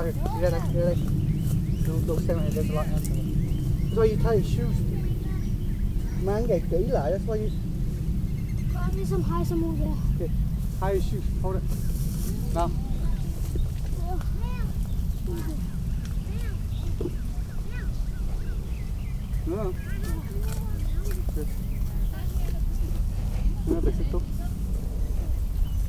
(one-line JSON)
{"title": "Family picking blueberries, Hockley, Texas - Chmielewski’s Blueberry Farm: Family", "date": "2012-05-29 11:49:00", "description": "Fun at Chmielewski’s Blueberry Farm's last pick of the season. Birds, buckets, kids, cicadas and other bugs, airplane traffic, ants, people talking..\nChurch Audio CA-14 omnis on sunglasses dangling around my neck (not so smart) > Tascam DR100 MK-2", "latitude": "30.04", "longitude": "-95.79", "altitude": "62", "timezone": "America/Chicago"}